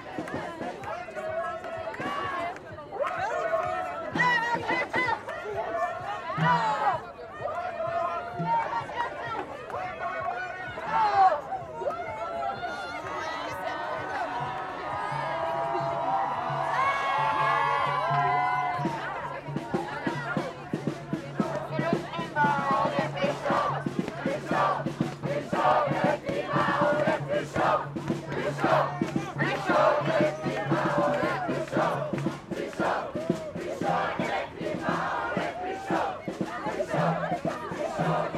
Boulevard Roi Albert II, Bruxelles, Belgique - Demonstration of young people for climate justice
Tech Note : Olympus LS5 internal microphones.
28 February 2019, 11:00